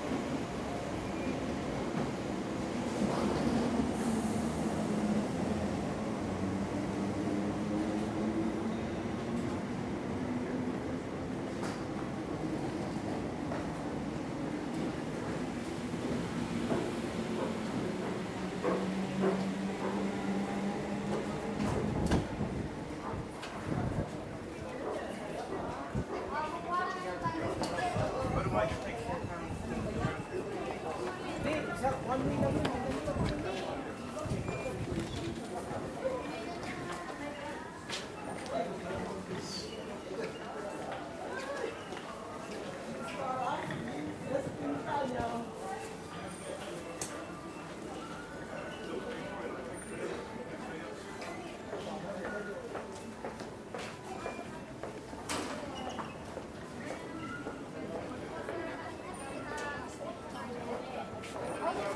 train station.
changing from stansted express to the london tube.
recorded july 18, 2008.
London Borough of Haringey, London, UK